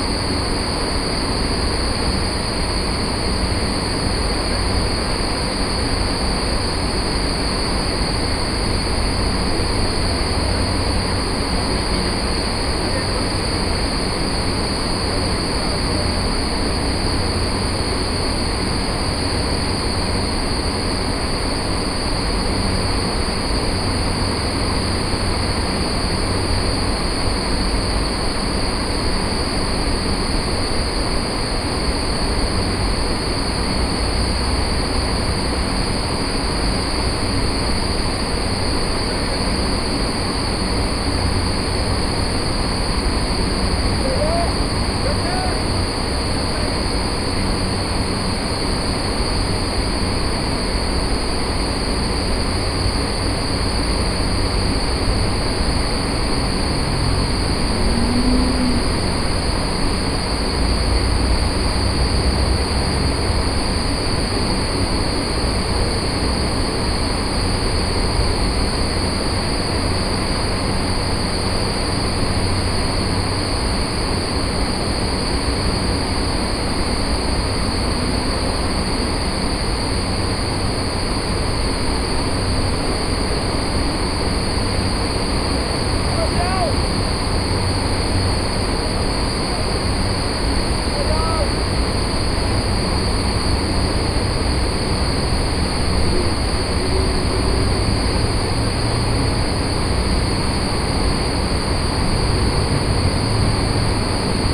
Norway, Oslo, Oslo opera house, Air Conditioning, Den Norske Opera & Ballett, Binaural